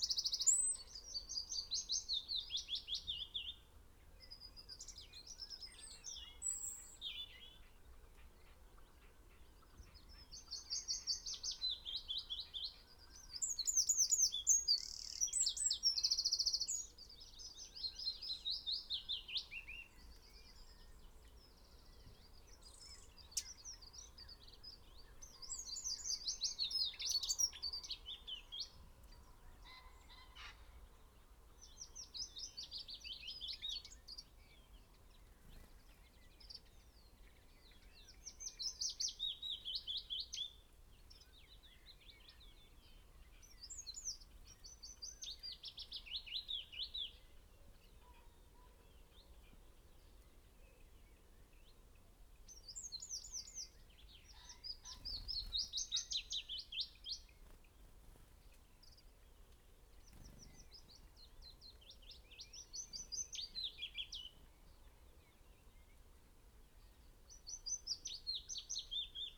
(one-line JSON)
{"title": "Green Ln, Malton, UK - willow warblers ...", "date": "2020-04-11 07:14:00", "description": "willow warbler ... dpa 4060s to Zoom H5 clipped to twigs ... bird call ... song ... from ... carrion crow ... wood pigeon ... wren ... robin ... buzzard ... red-legged partridge ... dunnock ... blackbird ... wood pigeon ... birds had arrived in the last 24 hours ... upto five willow warblers in constant motion ...", "latitude": "54.12", "longitude": "-0.57", "altitude": "96", "timezone": "Europe/London"}